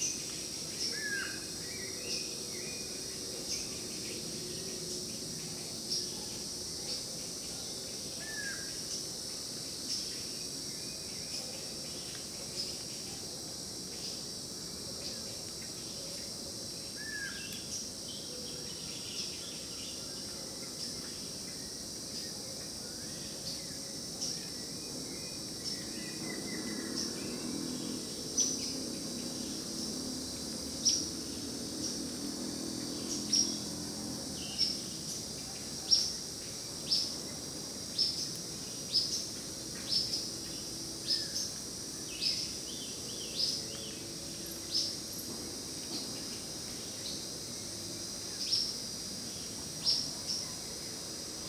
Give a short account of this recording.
In the initial hiking path one can hear the antrophony felt at the place as well the variety of birds inhabiting the place.